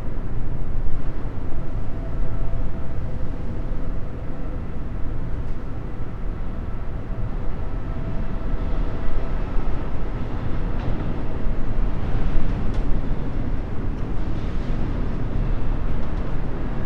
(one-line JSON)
{"title": "Punto Franco Nord, house, Trieste, Italy - rooftop flap", "date": "2013-09-11 16:05:00", "description": "broken ceiling with metal flap ... on the second floor of abandoned house number 25 in old harbor of Trieste, wind and train passes", "latitude": "45.67", "longitude": "13.76", "altitude": "3", "timezone": "Europe/Rome"}